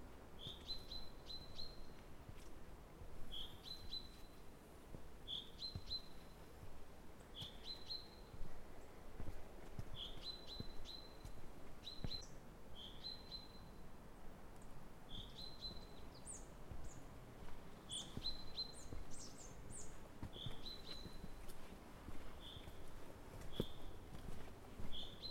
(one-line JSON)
{"title": "Lago di poschiavo, Natur", "date": "2011-07-19 16:30:00", "description": "Vogel am Lago di Poschiavo", "latitude": "46.28", "longitude": "10.10", "altitude": "1000", "timezone": "Europe/Zurich"}